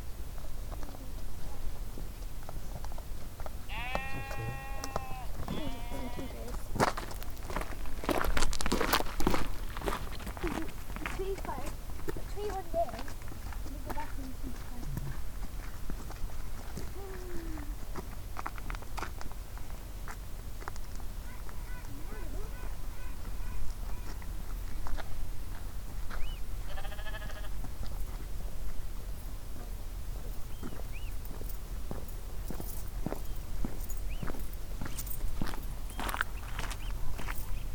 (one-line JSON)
{"title": "Shetland Islands, UK - Sheep grazing on Sumburgh Head, accompanied by seabirds and tourists", "date": "2013-07-31 20:30:00", "description": "Sumburgh Head is very popular with walkers, as you can quite easily see Puffins around there, and occasionally Whales are spotted from the viewpoints around the cliffs. It is an extraordinarily beautiful place, bordered on all sides with steep rock edifices, and on the grassland all around the car park, sheep are grazing. I am not sure that these sheep are actually grown for wool; they looked like meaty little Suffolk sheep rather than Shetland sheep grown for wool, but as is often the case in Shetland where you are never more than 3 miles from the coastline, there is a wonderful mix of grazing and pastoral sounds with maritime and seaside sounds. At this moment, a lamb was quite insistently baa-ing, very close to me on the path. As I stood very still, listening and recording with my trusty EDIROL R-09, a family passed me on the path, and several birds (I think perhaps even some oyster catchers?) flew by overhead.", "latitude": "59.86", "longitude": "-1.27", "altitude": "16", "timezone": "Europe/London"}